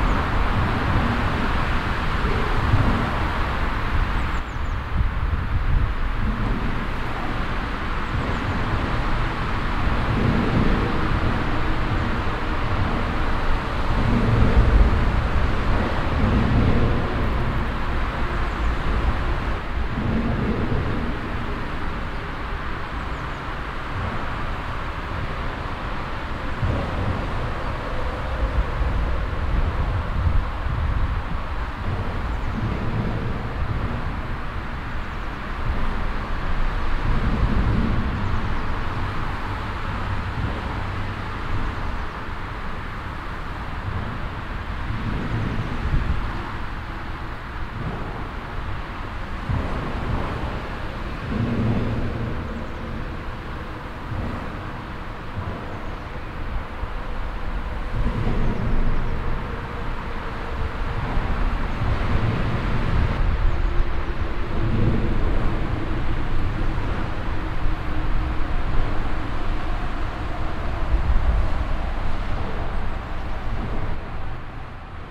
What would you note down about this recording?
soundmap: erkrath/ nrw, ambiente unter deutschlands grösster autobahnbrücke, mittags - märz 2007, project: social ambiences/ - in & outdoor nearfield recordings